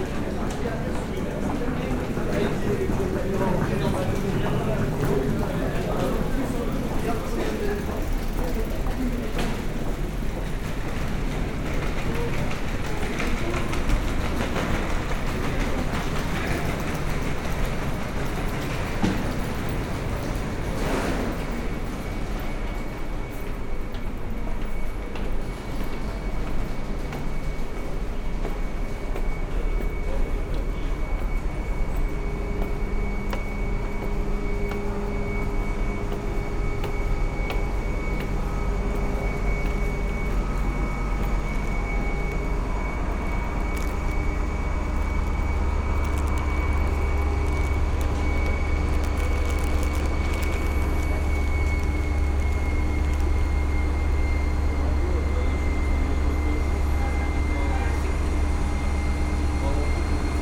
Clermont-Ferrand, France - Clermont-Ferrand station
Taking the train in the Clermont-Ferrand station, on a quiet thuesday morning.